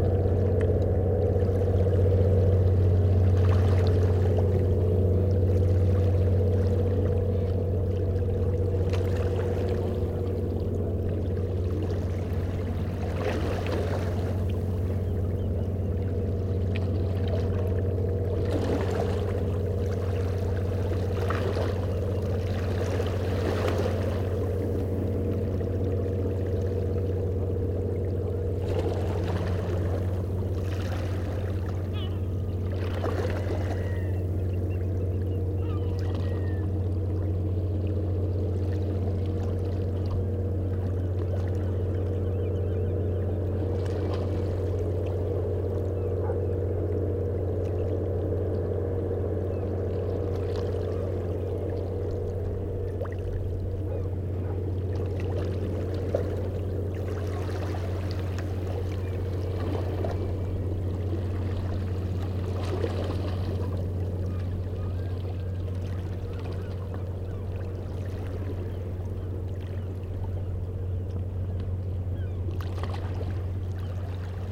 Breskens, Nederlands - Ferry leaving the harbour
On the Breskens harbour, a ferry is leaving. Princess Maxima boat is crossing the river and going to Vlissingen.